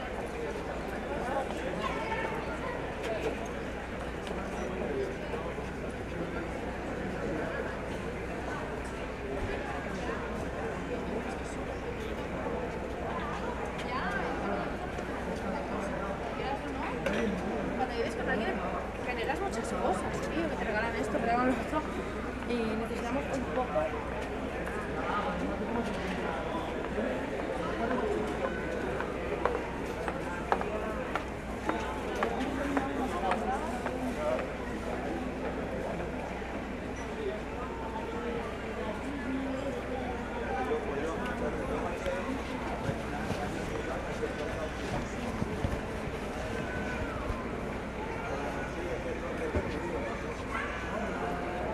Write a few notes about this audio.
arcelona, Passeig del Born at 20.10.2009